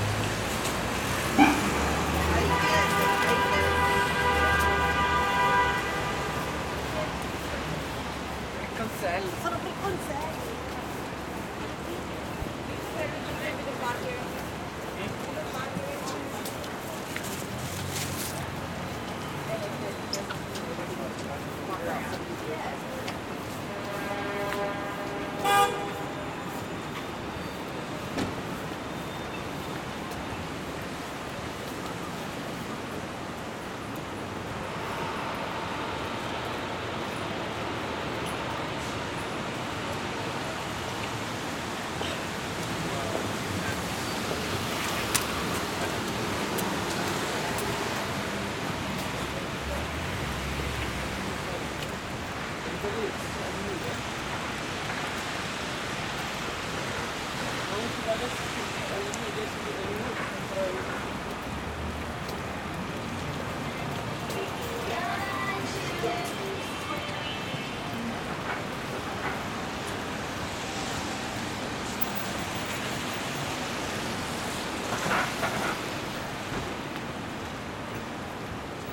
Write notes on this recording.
Exiting Grand Central-42nd Street Station through a less known passage that leads to a lobby of a building.